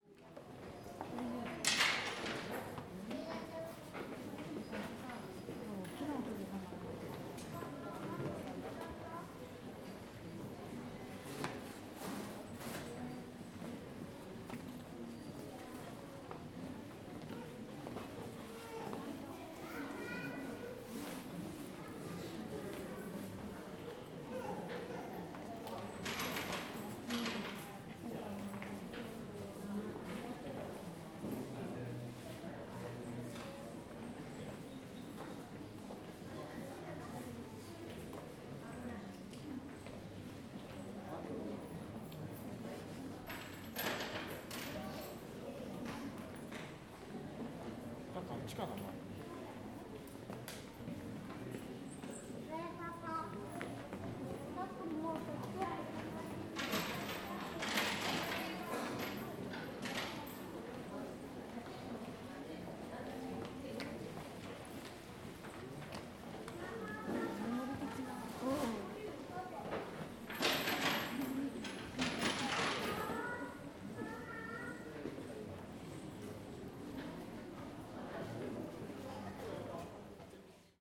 Inside of the main building of Hasedera building. You can hear tourists and children talking, as well as coins being thrown inside the prayer chest. Recorded with Zoom H2n
Kanagawa-ken, Japan